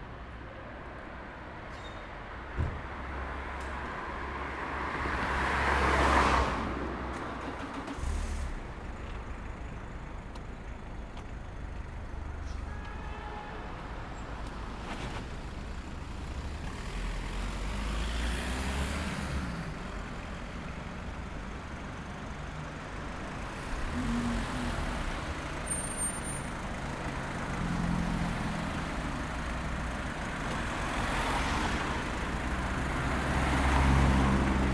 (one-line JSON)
{"title": "Eggenberg, Graz, Österreich - Street at Night", "date": "2015-12-16 18:09:00", "description": "street at night", "latitude": "47.07", "longitude": "15.41", "altitude": "367", "timezone": "Europe/Vienna"}